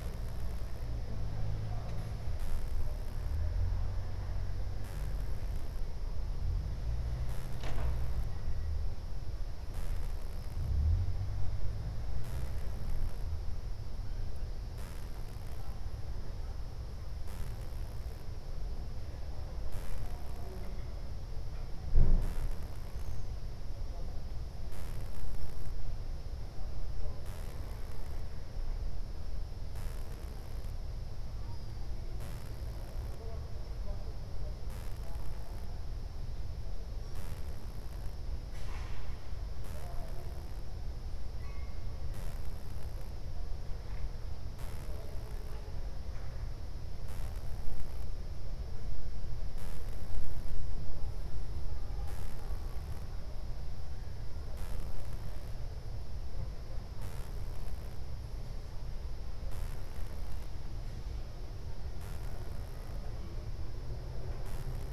Ascolto il tuo cuore, città. I listen to your heart, city. Several chapters **SCROLL DOWN FOR ALL RECORDINGS** - Summer afternoon with cello in background in the time of COVID19 Soundscape
"Summer afternoon with cello in background in the time of COVID19" Soundscape
Chapter CXV of Ascolto il tuo cuore, città. I listen to your heart, city
Tuesday, July 7th 2020, one hundred-nineteen day after (but day sixty-five of Phase II and day fifty-two of Phase IIB and day forty-six of Phase IIC and day 23rd of Phase III) of emergency disposition due to the epidemic of COVID19.
Start at 7:31 p.m. end at 8:21 a.m. duration of recording 50’00”
Piemonte, Italia, 7 July 2020